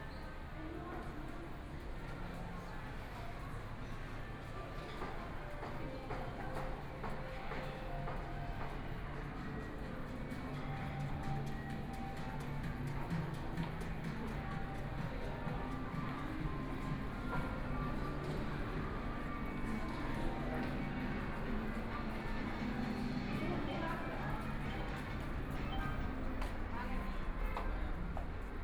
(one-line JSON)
{"title": "Nanjin Road, Shanghai - inside the department store", "date": "2013-11-25 16:18:00", "description": "The crowd, Walking inside the department store, Footsteps, Traffic Sound, Binaural recording, Zoom H6+ Soundman OKM II", "latitude": "31.24", "longitude": "121.48", "altitude": "9", "timezone": "Asia/Shanghai"}